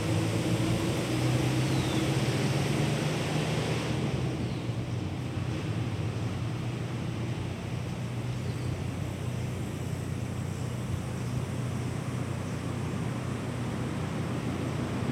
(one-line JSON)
{"title": "Cl., Bogotá, Colombia - ParkWay Bogotá", "date": "2022-04-16 13:20:00", "description": "Una tarde de Sábado en Semana santa del 2022 en el ParkWay Bogotá - Registrado con Zoom H3-VR / Binaural", "latitude": "4.63", "longitude": "-74.08", "altitude": "2566", "timezone": "America/Bogota"}